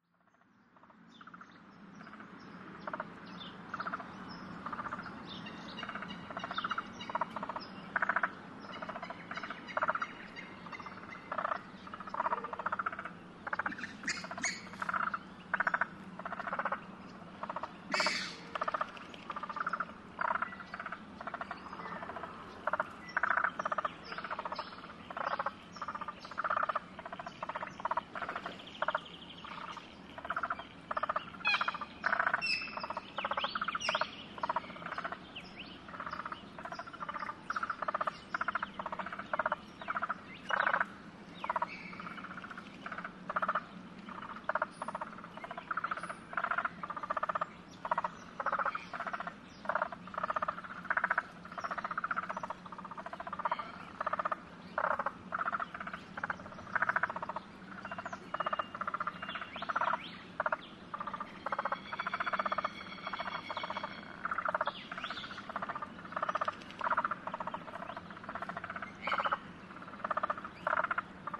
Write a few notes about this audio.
Recording of Spotted Grass Frogs in the Laratinga Wetlands at Mt Barker, South Australia. Recorded using Schoeps CCM4Lg & CCM8Lg in M/S configuration into a Sound Devices 702 CF recorder. Rycote/Schoeps blimp. Recorded at about 1:30 p.m. Wednesday 17th September 2008. Sunny, fine approx. 20 degrees C. This recording was for the Frog Survey that occurs every September. There is a road about 40 metres behind the microphones and at this time of the day there is a constant passing of cars & trucks. About 1.5 km further back is the South Eastern Freeway. The recording is a 'quiet' section of a much longer one.